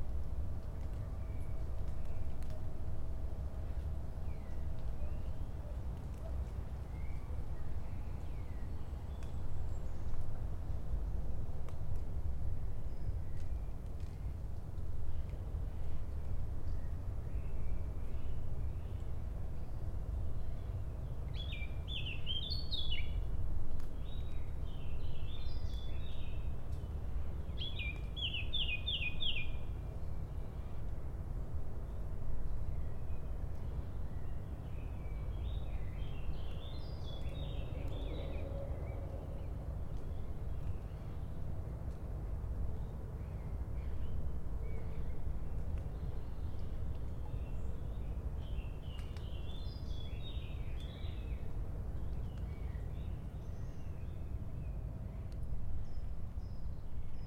Panovec, Nova Gorica, Slovenija - Tih dan po dežju ob trim stezi v Panovcu
Surprisingly quiet take, some water drops close to the end, birds chirping.
Recorded with H5n + AKG C568 B